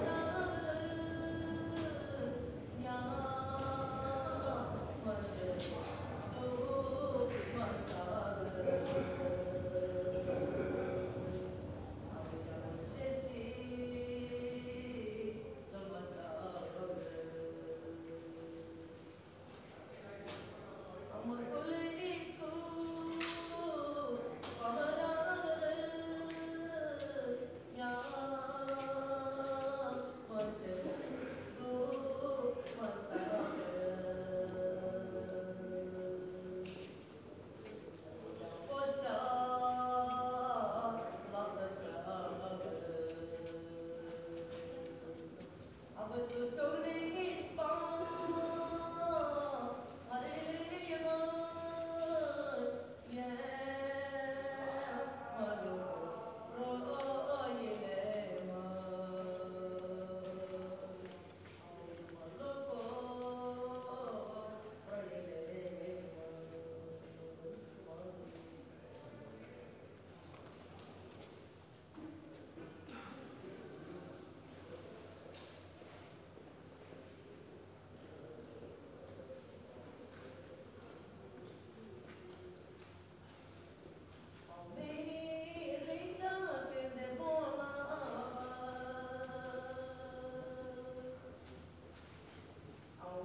berlin: u-bahnhof schönleinstraße - station ambience /w singer
recorded while waiting for a train, with recorder sitting in the pocket. Station ambience, a woman sits on the steps singing and begging for money.
(Sony PCM D50)